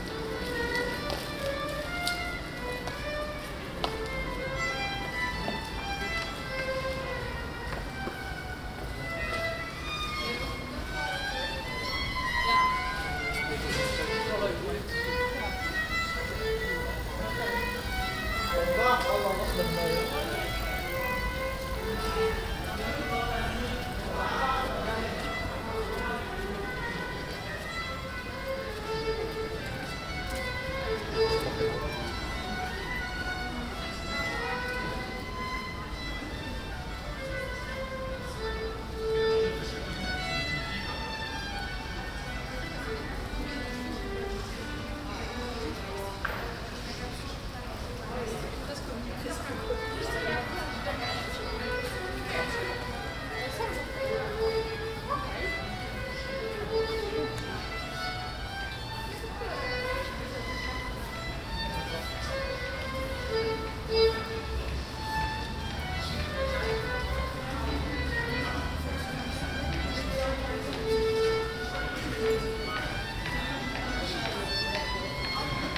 Uilebomen, Den Haag, Nederland - Turfmarkt
Pedestrians, cyclists and a violist at the Turfmarkt; a passageway between the Central Station and the city centre.
Binaural recording